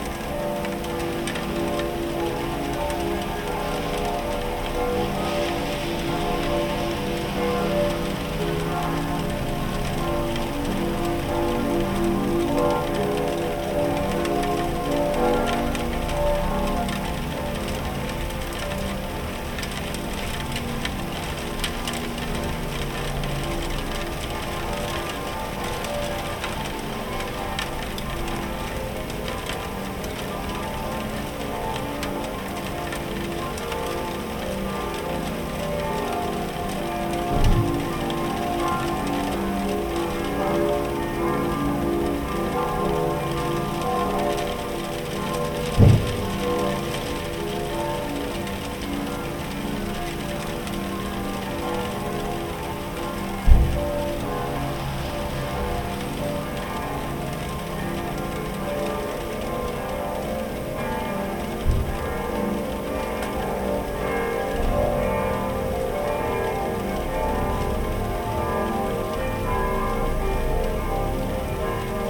Christmas Church Bells, Icy Sharp Rain, Pigeons on the Roof & The City Sounds - IN THE ATTIC DURING THE CHRISTMAS DAY
During this Christmas Day I wanted to record the Christmas Church Bells and the Rain simultaneously! I was very lucky today & I made a great "AMBISONICS RECORDING" of the Christmas Church Bells, Icy Sharp Rain, Pigeons and Sounds From The Streets. A nice long session of Christmas Church Bells (ca. 10 mins) made a great atmosphere recording. 1km distant Church Bells sounded very nice today, maybe also because of the Icy & Sharp Rain!
Niedersachsen, Deutschland, December 2021